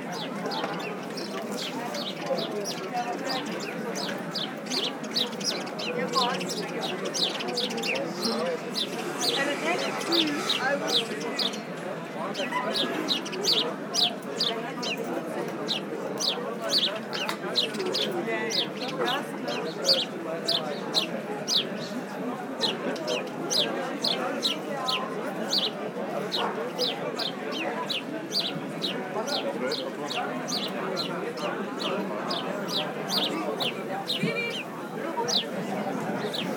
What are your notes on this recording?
A short walk into the Christiania district, a free area motivated by anarchism. People discussing, drinking a lot, and buying drugs to sellers.